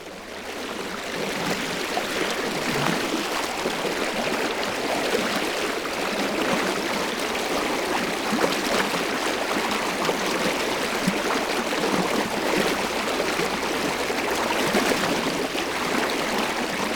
river Drava, Loka - murmuring stone
Starše, Slovenia, 29 November 2015, 14:37